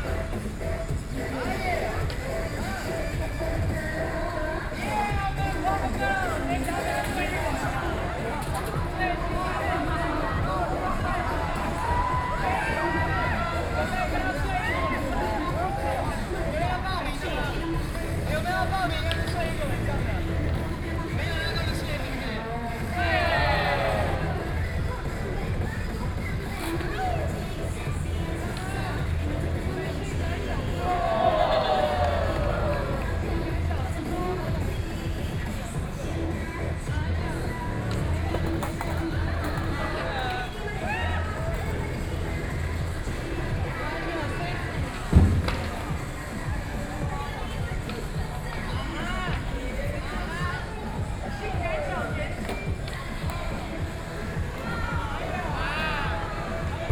Taipei City Hospital - skateboarding
Plaza outside the hospital, Young people are skateboarding, Binaural recordings, Sony PCM D50 + Soundman OKM II
19 October 2013, 16:51